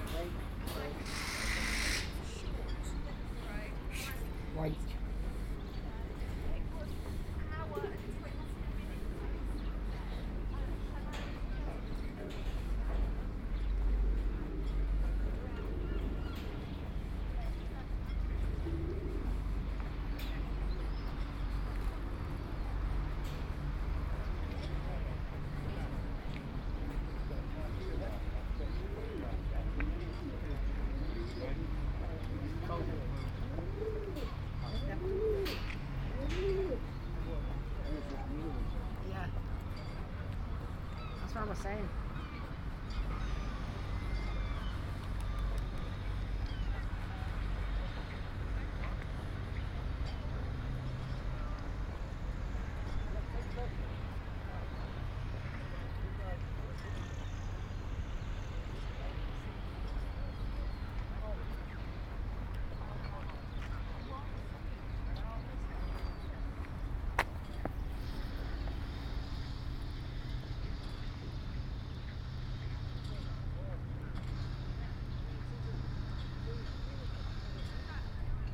Unnamed Road, Folkestone, Regno Unito - GG Folkestone-Harbour-D 190524-h14-30
Total time about 36 min: recording divided in 4 sections: A, B, C, D. Here is the fourth: D.